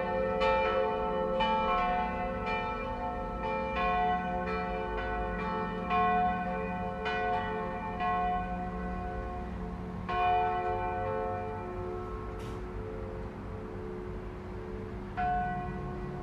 Länsmansvägen, Umeå, Sverige - Church bell from the balcony
Västerbottens län, Norrland, Sverige, 6 September 2020